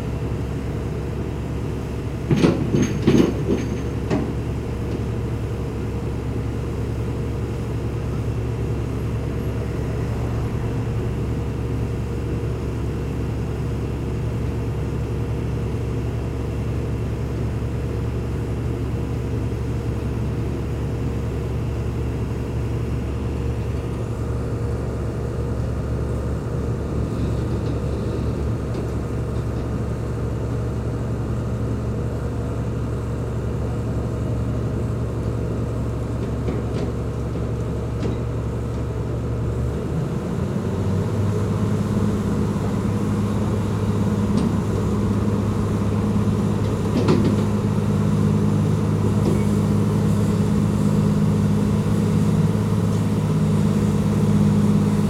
Heurteauville, France - Jumièges ferry
The Jumièges ferry charging cars and crossing the Seine river.
17 September 2016, 12:00